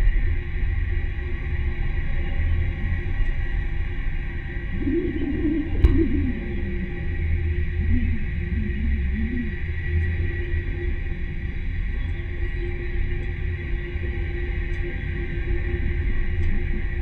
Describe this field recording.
tram pole at an abandoned terminus. recorded with contact mic.